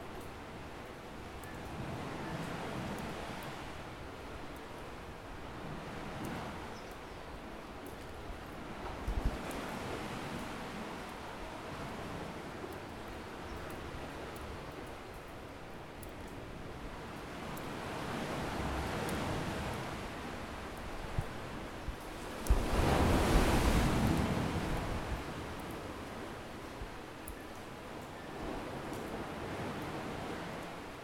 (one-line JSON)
{"title": "Ladder Bay Cave, Toroa Pont, North Shore, Auckland", "date": "2010-09-30 18:00:00", "description": "Field recording taken in a cave on Toroa Point.", "latitude": "-36.70", "longitude": "174.76", "timezone": "Pacific/Auckland"}